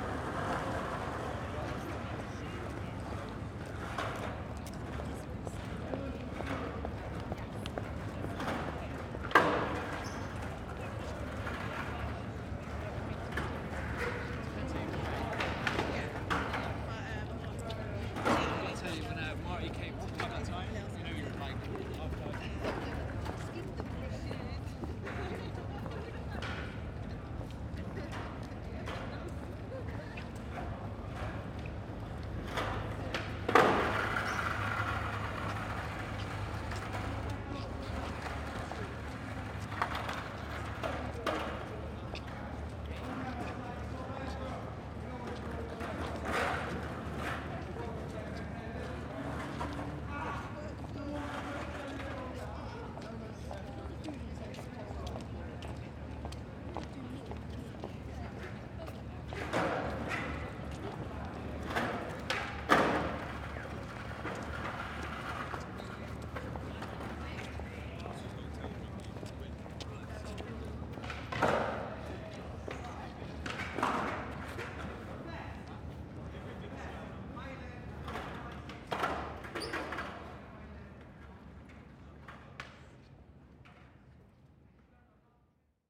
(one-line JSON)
{"title": "South Bank, London, Vereinigtes Königreich - Thames riverside - Skaters and passers-by", "date": "2013-02-14 18:33:00", "description": "Thames riverside - Skaters and passers-by. In front of, and below the Royal National Theatre.\n[Hi-MD-recorder Sony MZ-NH900, Beyerdynamic MCE 82]", "latitude": "51.51", "longitude": "-0.12", "altitude": "11", "timezone": "Europe/London"}